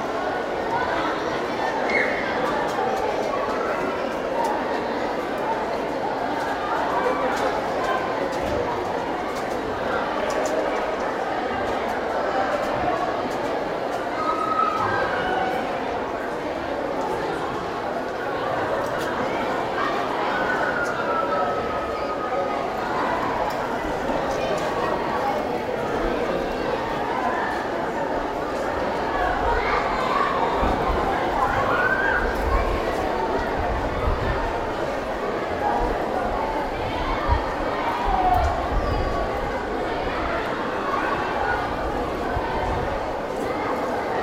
Gualaquiza, Ecuador - School at recess
While recording a documentary, I sat on the middle of the school´s courtyard and recorded this with TASCAM DR100